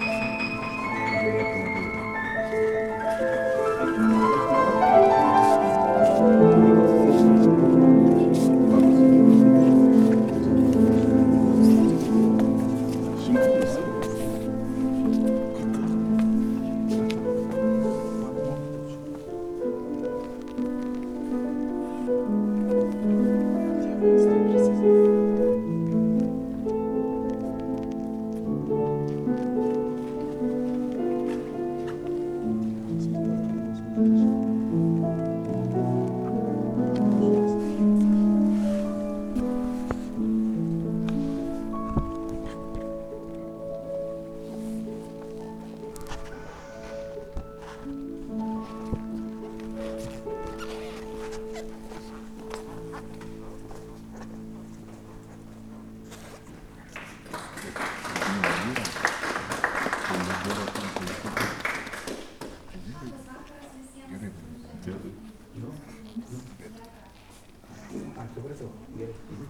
Lithuania, Utena, opening of exhibition in local cultural centre
piano playing in the Utena cultural centre at some exhibition opening